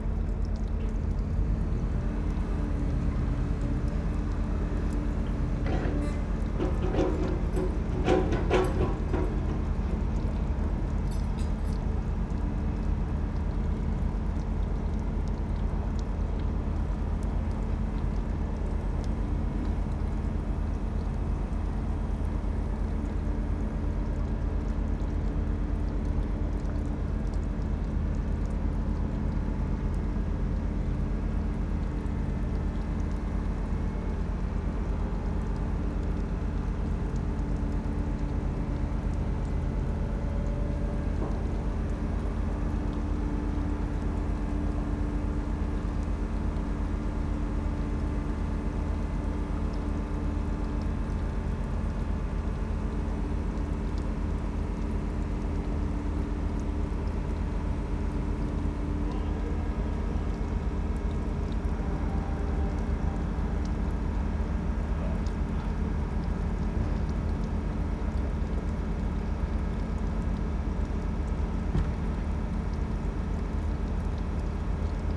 berlin, friedelstaße: hydrant - the city, the country & me: water drips from a hydrant
water drips from a hydrant, positioning of a steel girder by using an excavator, some welding
the city, the country & me: november 1, 2013